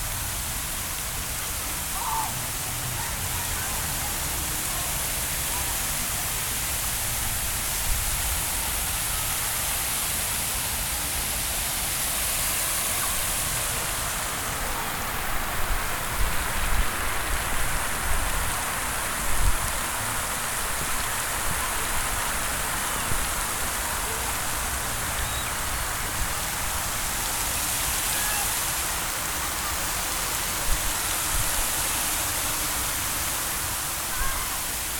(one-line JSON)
{"title": "Millennium Park, Chicago, IL, USA - Crown Fountain - Street Level, Day Time", "date": "2017-06-02 14:00:00", "description": "Recorded with Zoom H4N at the Crown Fountain. It was 82 °F, and children were playing in the fountain.", "latitude": "41.88", "longitude": "-87.62", "altitude": "209", "timezone": "America/Chicago"}